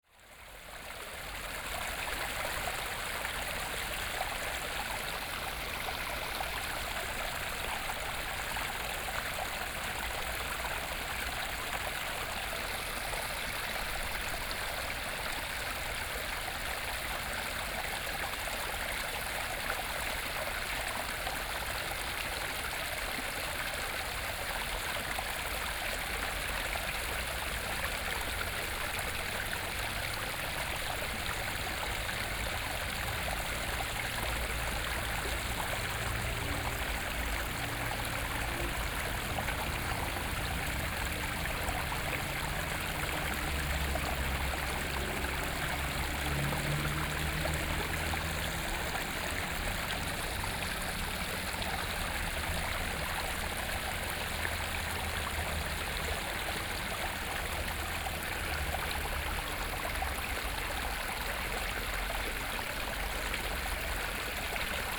員山公園, Yuanshan Township - The sound of water
In the Park, The sound of water
Sony PCM D50+ Soundman OKM II